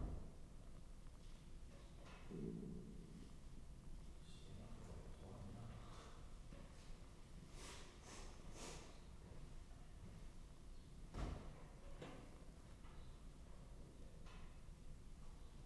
Lewins Ln, Berwick-upon-Tweed, UK - inside the church of St Mary the Virgin ...
inside the church of St Mary the Virgin ... Lindisfarne ... lavalier mics clipped to sandwich box ... background noise ...
England, United Kingdom, 3 November, 11:50